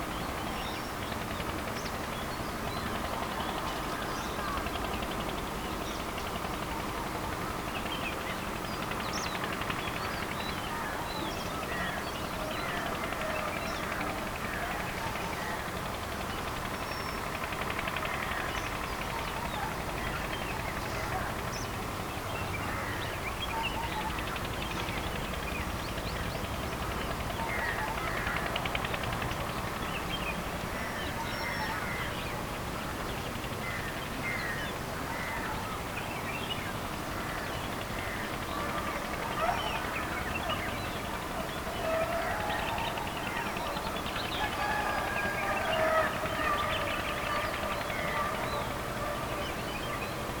dawn in Munnar - over the valley 6
This was it. Hope you like this audio trip over the valley of Munnar